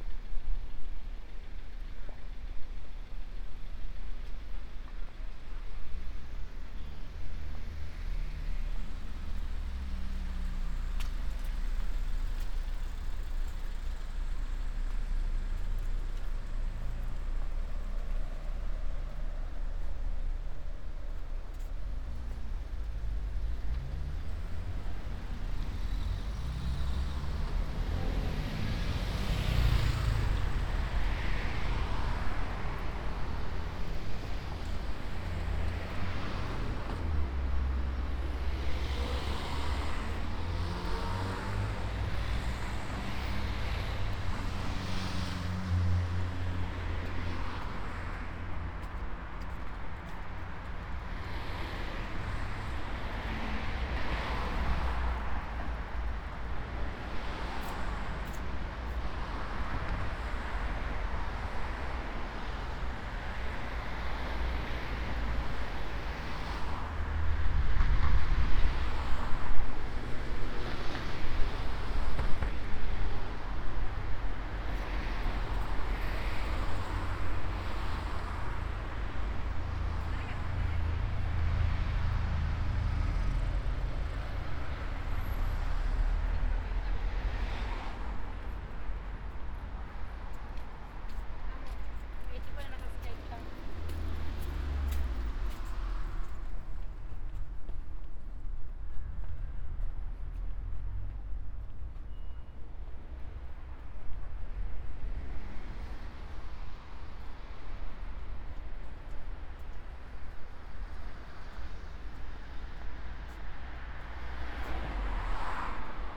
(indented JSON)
{
  "title": "Ascolto il tuo cuore, città. I listen to your heart, city. Chapter CXXXIII - Almost sunset at Valentino park in the time of COVID19: soundwalk",
  "date": "2020-09-26 18:00:00",
  "description": "\"Almost sunset at Valentino park in the time of COVID19\": soundwalk\nChapter CXXXIII of Ascolto il tuo cuore, città. I listen to your heart, city\nSaturday, September 26th 2020. San Salvario district Turin, to Valentino, walking in the Valentino Park, Turin, five months and fifteen days after the first soundwalk (March 10th) during the night of closure by the law of all the public places due to the epidemic of COVID19.\nStart at 6:00 p.m. end at 7:00 p.m. duration of recording 01:00:15. Local sunset time 07:17 p.m.\nThe entire path is associated with a synchronized GPS track recorded in the (kmz, kml, gpx) files downloadable here:",
  "latitude": "45.06",
  "longitude": "7.69",
  "altitude": "234",
  "timezone": "Europe/Rome"
}